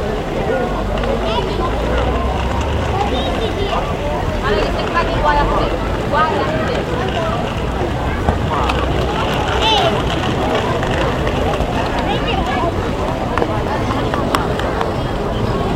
Piazza Maggiolini, Parabiago, gente che parla
Gente che parla in piazza al termine di una calda giornata estiva
24 June, 21:37